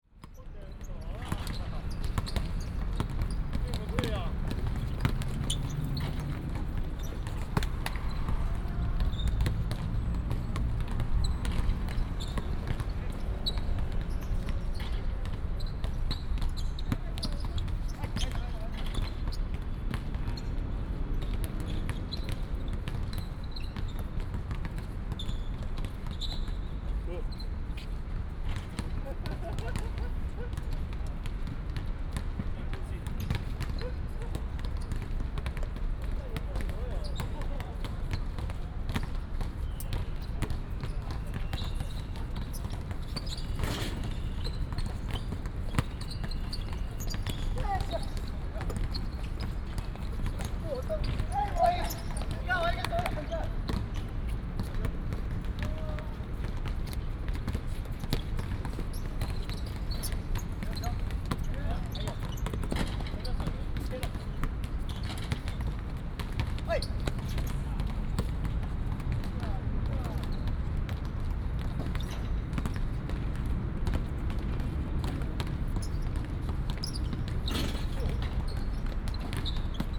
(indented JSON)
{
  "title": "臺北科技大學, Taipei City - Basketball court",
  "date": "2015-06-18 18:06:00",
  "description": "Basketball court\nBinaural recordings\nSony PCM D100 + Soundman OKM II",
  "latitude": "25.04",
  "longitude": "121.54",
  "altitude": "16",
  "timezone": "Asia/Taipei"
}